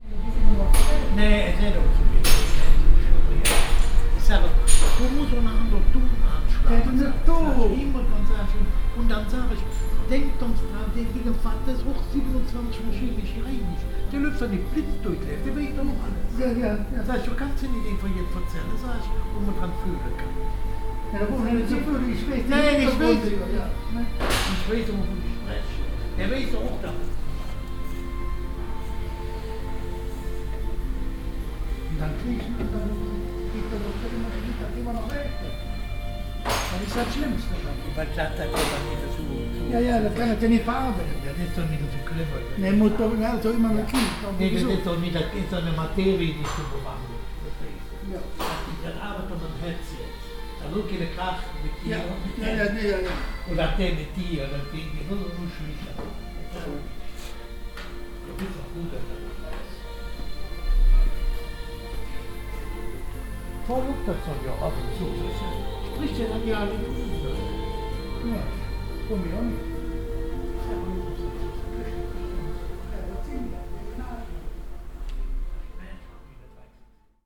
at the entrance area of the second hand store. the owner and two friends talking in local slang. german schlager music from a radio. metal sounds.
soundmap d - social ambiences and topographic field recordings
16 January 2011, 17:18